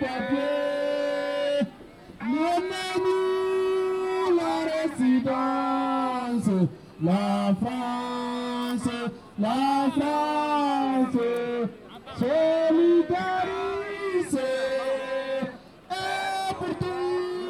La Chapelle, Paris, France - hymne des sans papiers et des réfugiés
demonstration & talks following the intervention of the police against the migrants rue Pajol.